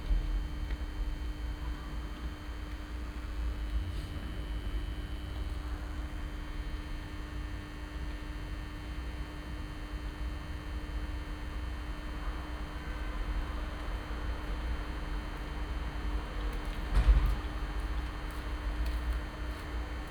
Sanderstr., Neukölln, Berlin - noisy defective light
Berlin, Sanderstr. at night, noisy defective light over house entrance, steps, voices
Berlin, Germany